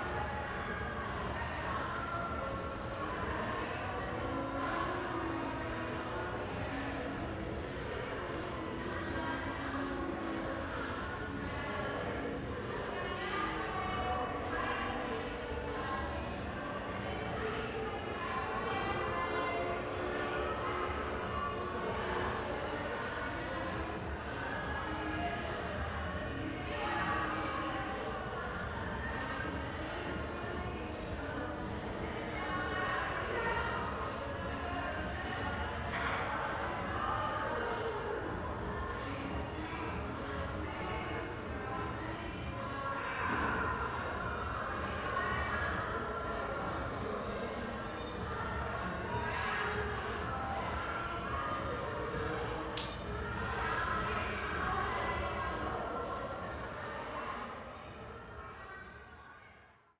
Berlin Bürknerstr., backyard window - night life
a party goes on somehwere in my backyard